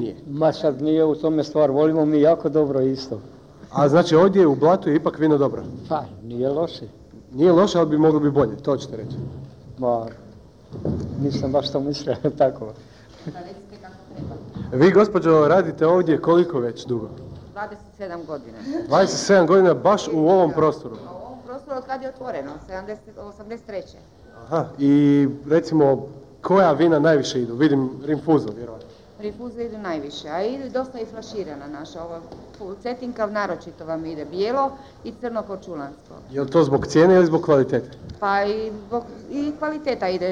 Rijeka, Croatia, Archive - Radio 051 Interview, Vinarija
Radio 051 interviews in the streets of Rijeka in 1994.
Interviews was recorded and conducted by Goggy Walker, cassette tape was digitising by Robert Merlak. Editing and location by Damir Kustić in 2017.
February 1994